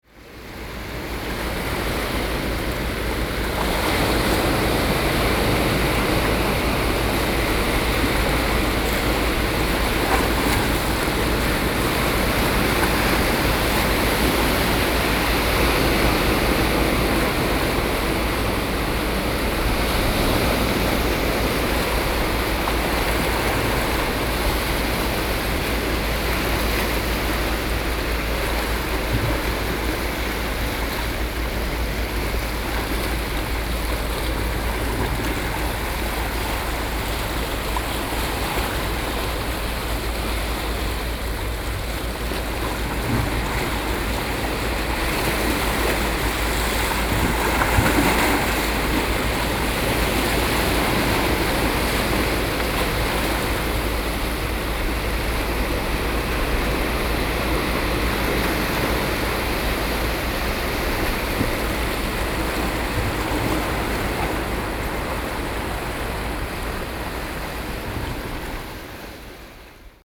On the banks of the port, sound of the waves
Zoom H4n+Rode NT4(soundmap 20120711-25 )